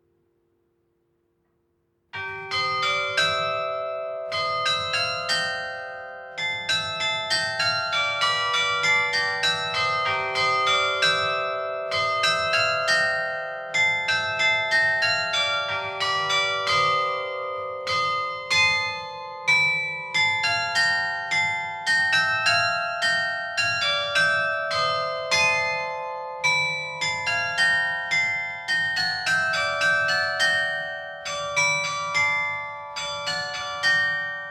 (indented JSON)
{
  "title": "Carillon de l'abbatiale de St-Amand-les-Eaux - Carillon-St-Amand-les-Eaux - Ritournelles",
  "date": "2021-05-05 13:00:00",
  "description": "4 ritournelles (quart-d'heure, demi-heure, trois-quart-d'heure et heure) interprétées par Charles Dairay, Maître carillonneur sur le carillon de la tour abbatiale de St-Amand-les-Eaux.",
  "latitude": "50.45",
  "longitude": "3.43",
  "altitude": "19",
  "timezone": "Europe/Paris"
}